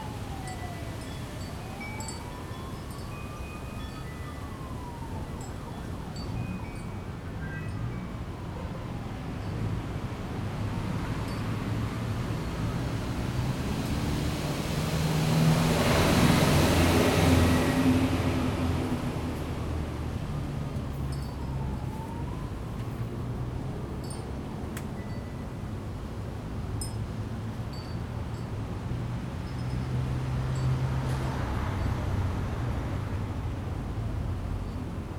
windy night at a terrace, Neuquén, Argentina
neuquén, wind, terrace, airplane, cars
20 January, 22:00